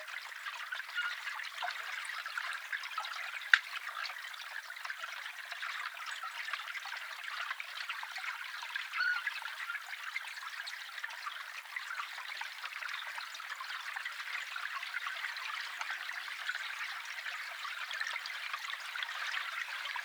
Overschiese Dorpsstraat, Rotterdam, Netherlands - Underwater recording. Windy day
Underwater recording using 2 hydrophones. Very windy day.